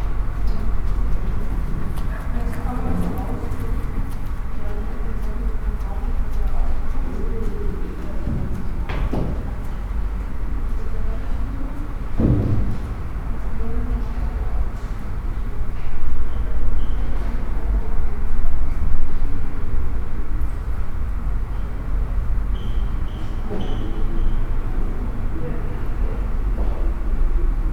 city library, Kleistforum, Hamm, Germany - library hum Friday eve
hum of the building with its open staircase; steps and voices over 3 floors; bus station roaring outside;
the recordings were made in the context of the podcast project with Yes Afrika Women Forum
Nordrhein-Westfalen, Deutschland, 12 June 2015